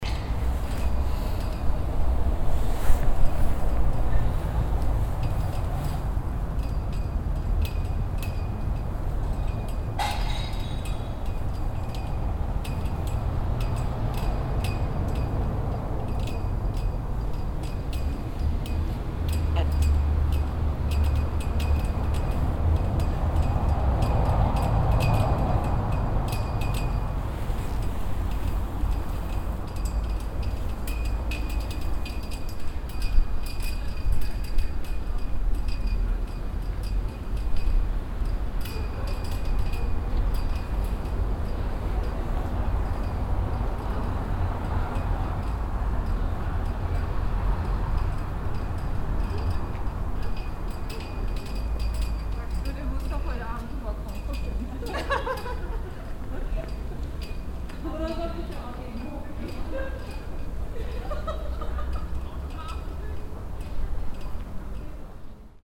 cologne, judengasse, fahnen im wind
abends vor dem kölner rathaus, fahnen flattern im wind, schritte und stimmen flanierender altstadtbesucher
soundmap nrw:
projekt :resonanzen - social ambiences/ listen to the people - in & outdoor
judengasse, vor dem rathaus, September 2008